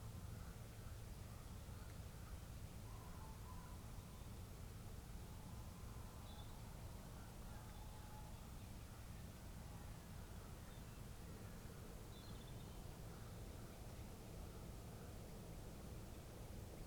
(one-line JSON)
{"title": "The Vicarage, Helperthorpe, Malton, UK - Dawn chorus in February ...", "date": "2018-02-04 07:00:00", "description": "Dawn chorus in February ... open lavalier mics on T bar strapped to bank stick ... bird song and calls from ... crow ... rook ... jackdaw ... pheasant ... robin ... tree sparrow ... background noise from traffic etc ...", "latitude": "54.12", "longitude": "-0.54", "altitude": "85", "timezone": "Europe/London"}